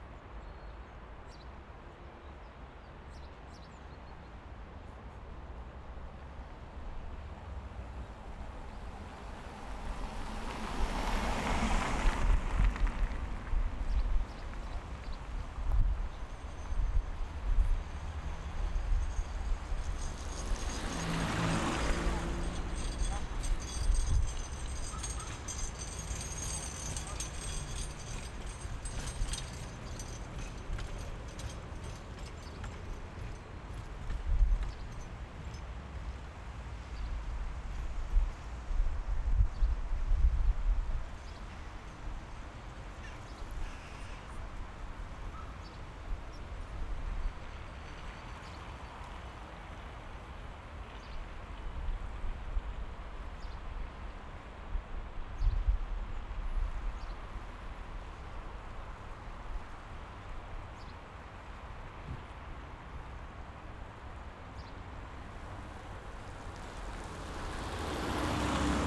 {"title": "ул. 50-летия ВЛКСМ, Челябинск, Челябинская обл., Россия - Morning, traffic, cars, tram, flying plane", "date": "2020-02-21 10:06:00", "description": "Recorded at one of the major intersections of the Chelyabinsk microdistrict. Morning of the working day.\nZoom F1 + XYH6", "latitude": "55.24", "longitude": "61.38", "altitude": "208", "timezone": "Asia/Yekaterinburg"}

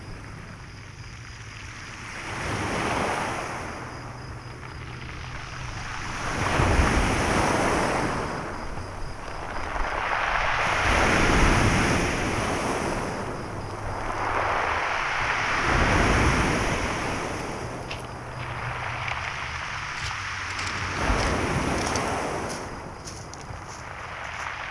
{"title": "Kalkan, Turkey - 915f waves on a pebbly beach", "date": "2022-09-21 20:05:00", "description": "Binaural recording of waves on a pebbly beach.\nBinaural recording made with DPA 4560 on a Tascam DR 100 MK III.", "latitude": "36.26", "longitude": "29.42", "altitude": "260", "timezone": "Europe/Istanbul"}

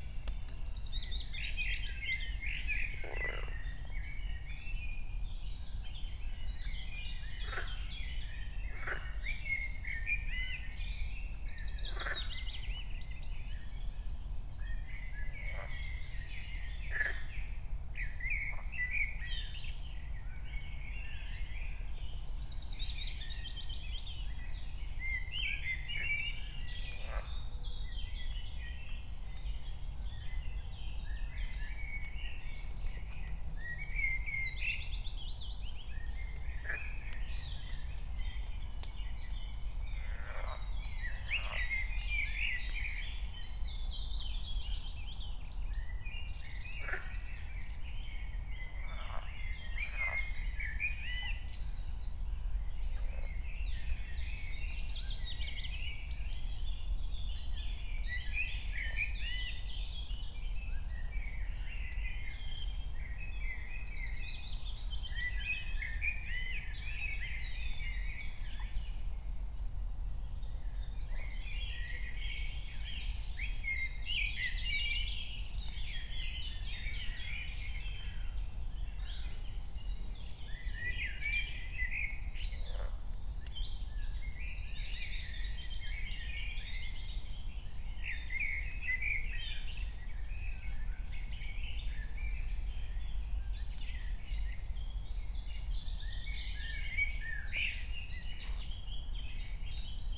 {"title": "Königsheide, Berlin - forest ambience at the pond", "date": "2020-05-23 06:00:00", "description": "6:00 drone, cars, s-bahn trains, frogs, more birds, some bathing", "latitude": "52.45", "longitude": "13.49", "altitude": "38", "timezone": "Europe/Berlin"}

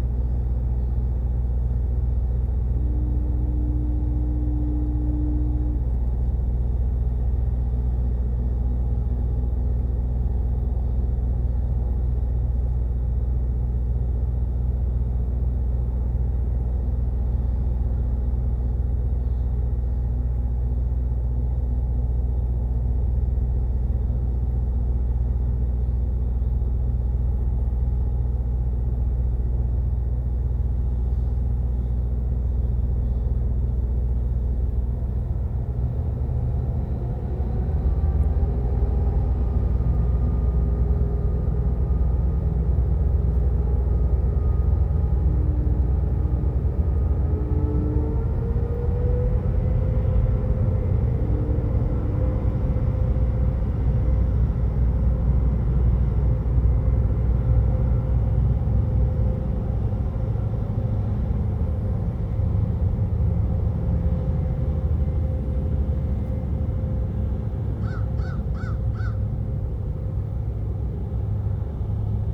{"title": "Freeport, NS, Canada - Departing ferry, 2 crows and the emerging atmosphere", "date": "2015-10-12 15:57:00", "description": "The Freeport Ferry fires up and slowly chugs into the distance. Crows caw. A distant shipping horn sounds. Very little appears to happen in the quiet empty atmosphere. The scene is very filmic. We are waiting for something, a significant event, probably dramatic and not very pleasant. But what? Well no such thing occurs today. We drive away.", "latitude": "44.27", "longitude": "-66.33", "altitude": "6", "timezone": "America/Halifax"}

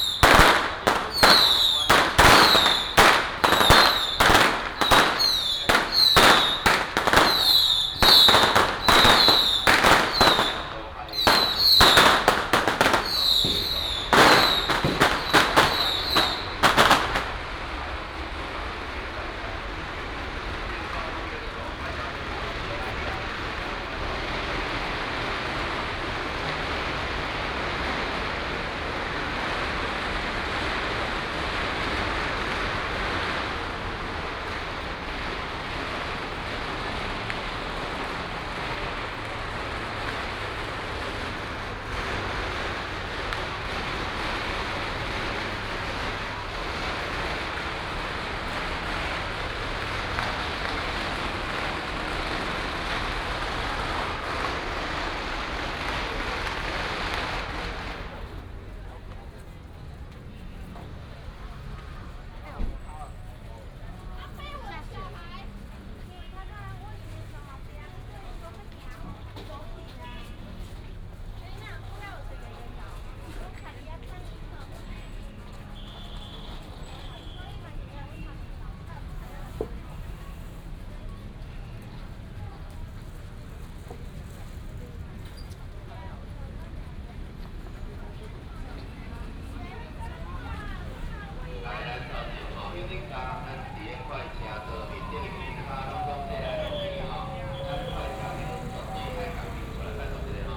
{"title": "Zhongshan Rd., Shalu Dist., Taichung City - Firecrackers and fireworks", "date": "2017-02-27 10:04:00", "description": "Firecrackers and fireworks, Baishatun Matsu Pilgrimage Procession", "latitude": "24.24", "longitude": "120.56", "altitude": "13", "timezone": "Asia/Taipei"}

